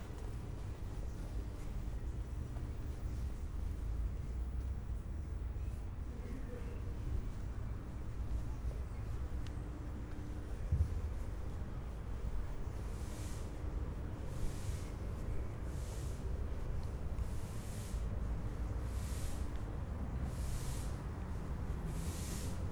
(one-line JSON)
{"title": "berlin, am treptower park: gartencenter - A100 - bauabschnitt 16 / federal motorway 100 - construction section 16: garden centre", "date": "2011-06-15 19:21:00", "description": "soundwalk through a garden centre\nthe federal motorway 100 connects now the districts berlin mitte, charlottenburg-wilmersdorf, tempelhof-schöneberg and neukölln. the new section 16 shall link interchange neukölln with treptow and later with friedrichshain (section 17). the widening began in 2013 (originally planned for 2011) and shall be finished in 2017.\nsonic exploration of areas affected by the planned federal motorway a100, berlin.\njune 15, 2011", "latitude": "52.49", "longitude": "13.46", "altitude": "38", "timezone": "Europe/Berlin"}